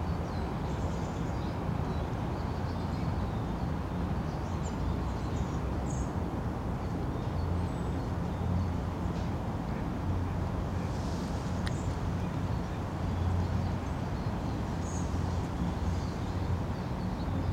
Vilnius, Lithuania, from grand exposure to the river
standing on a high exposure to the river Neris. city's drone